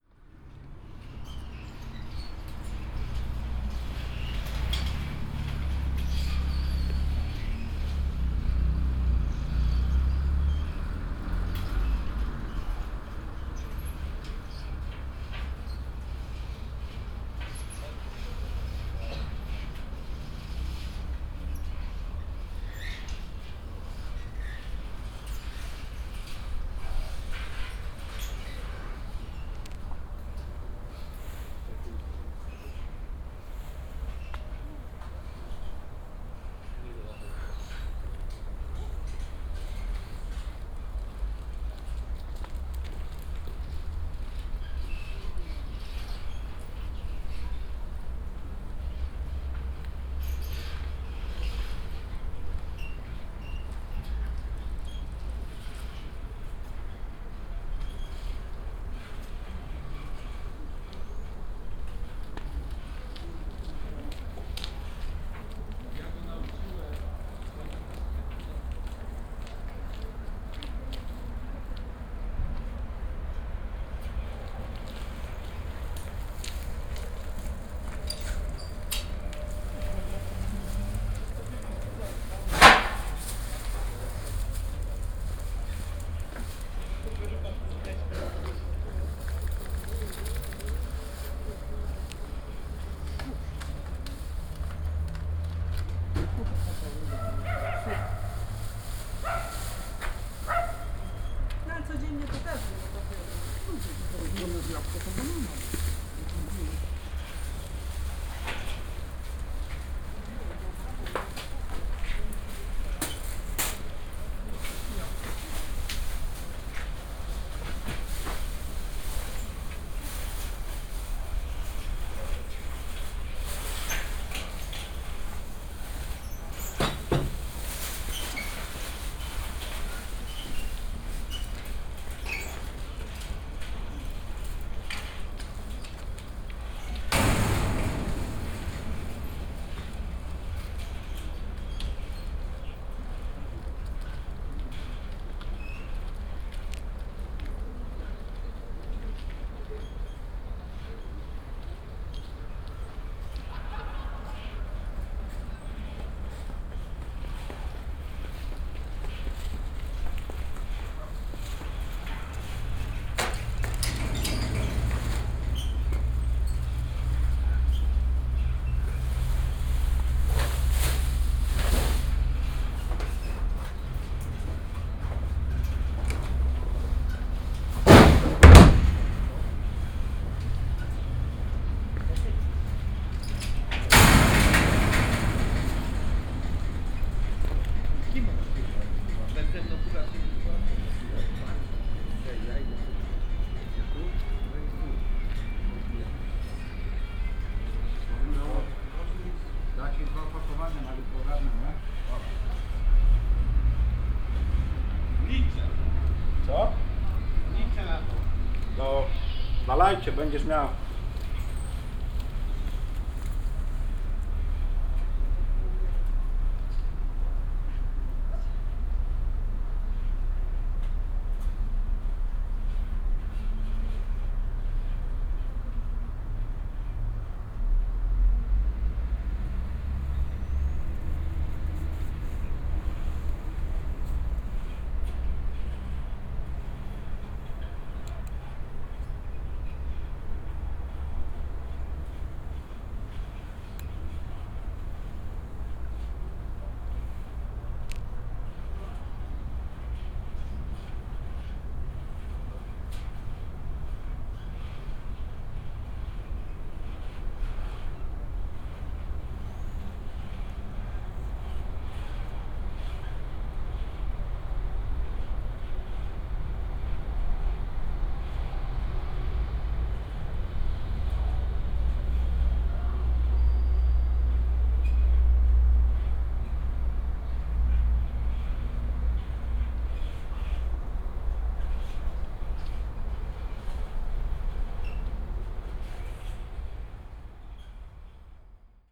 (binaural) some strange sounds coming form around the garbage containers. there was no one inside the place, and it didn't sound like rats. yet a lot of moving sounds were coming from the garbage bins. as if they were alive. i couldn't go inside to check as the room was locked. every once in a while people came to throw away their trash, slamming the door. quiet evening ambience of the housing estate.
22 April 2015, ~21:00